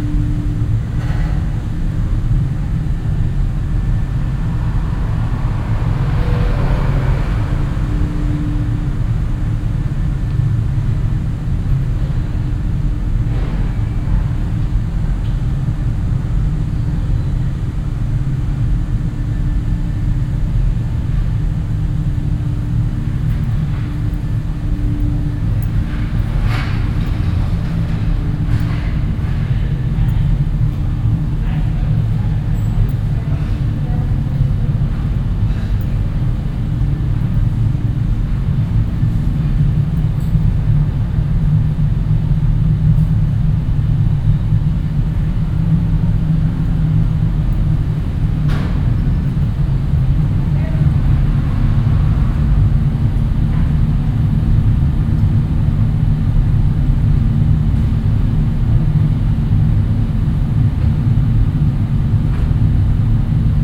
cologne, bruesselerstrasse, einfahrt, lüftung
soundmap: köln/ nrw
toreinfahrt, grosses rohr zu einer lüftungsanlage dazu im hintergrund staubsaugergeräusche, nachmittags
project: social ambiences/ listen to the people - in & outdoor nearfield recordings